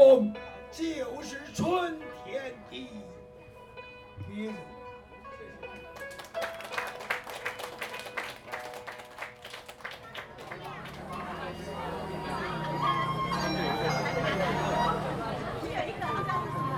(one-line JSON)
{"title": "BiHu Park, Taipei City - Poets recite", "date": "2014-09-14 14:44:00", "description": "The Taiwan famous poet reciting, Opening Event\nZoom H2n MS+XY", "latitude": "25.08", "longitude": "121.59", "altitude": "13", "timezone": "Asia/Taipei"}